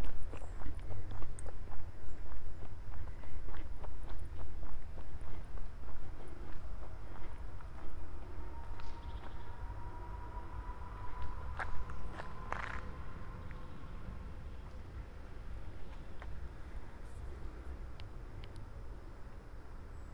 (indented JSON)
{
  "title": "Ziegelwiese Park, Halle (Saale), Germania - WLD2020, World Listening Day 2020, in Halle, double path synchronized recording: A",
  "date": "2020-07-18 19:48:00",
  "description": "WLD2020, World Listening Day 2020, in Halle, double path synchronized recording: A\nIn Halle Ziegelwiese Park, Saturday, July 18, 2020, starting at 7:48 p.m., ending at 8:27 p.m., recording duration 39’18”\nHalle two synchronized recordings, starting and arriving same places with two different paths.\nThis is file and path A:\nA- Giuseppe, Tascam DR100-MKIII, Soundman OKMII Binaural mics, Geotrack file:\nB – Ermanno, Zoom H2N, Roland CS-10M binaural mics, Geotrack file:",
  "latitude": "51.49",
  "longitude": "11.95",
  "altitude": "77",
  "timezone": "Europe/Berlin"
}